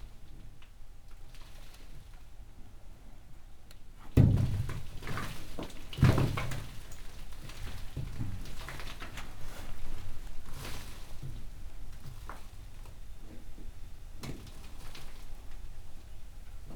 ruin of german ammunition factory, Ludwikowice Klodzkie, Poland - inside a tunnel
18 July 2008, 15:20